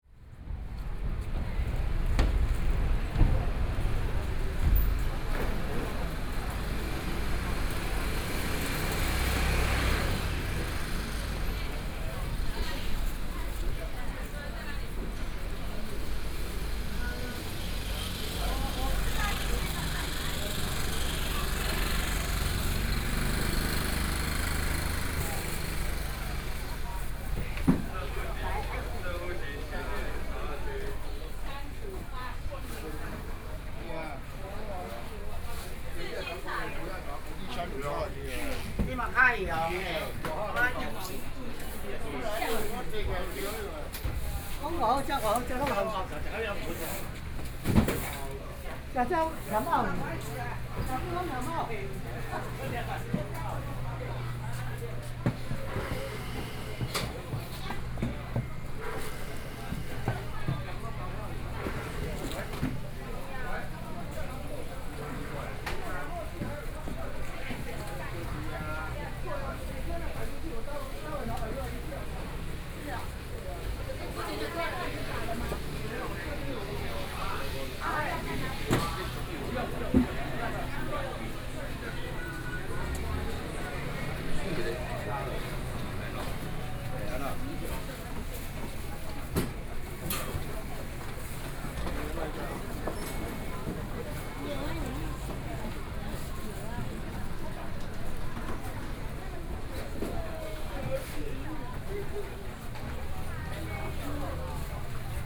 第二果菜批發市場, Taipei City - Wholesale
walking in the Fruit and vegetable wholesale market, Traffic Sound
Binaural recordings
27 February, ~07:00